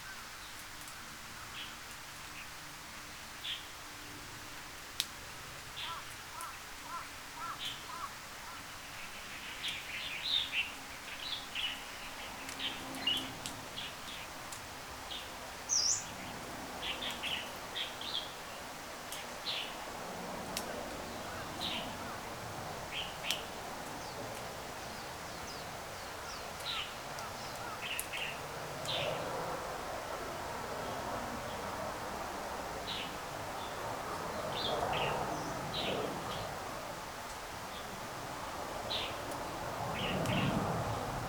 Hong Kong Trail Section, The Peak, Hong Kong - H012 Distance Post
The twelfth distance post in HK Trail, located at the east-northeast side of Pokfulam Reservoir. You can listen to a great number of kinds of birds and a light shower.
港島徑第十二個標距柱，位於薄扶林水塘的東北偏東。你可以聽到豐富的雀鳥種類和微微細雨的聲音。
#Bird, #Plane, #Dog, #Bark, #Crow, #Rain
香港 Hong Kong, China 中国